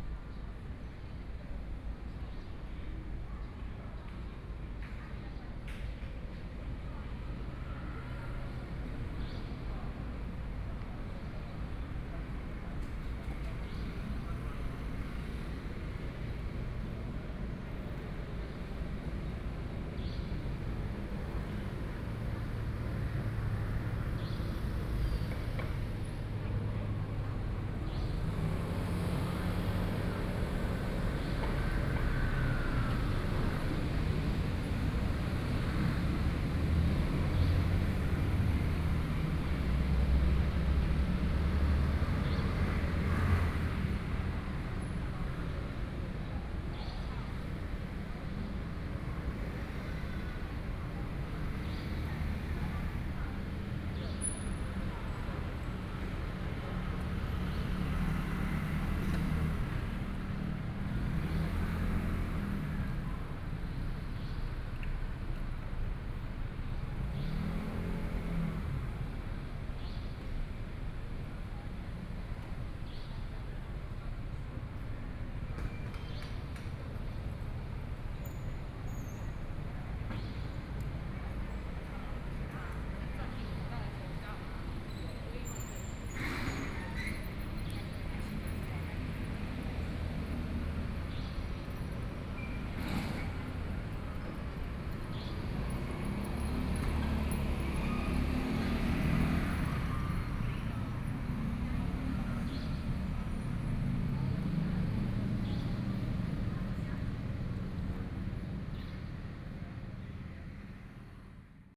鹽埕區光明里, Kaoshiung City - The plaza at night
The plaza at night, Traffic Sound, Birds singing